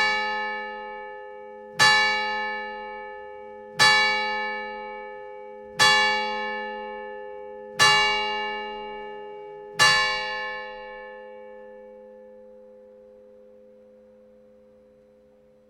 Bouchain (Nord)
Carillon de l'église St-Quentin
Ritournelles automatisées + 10h.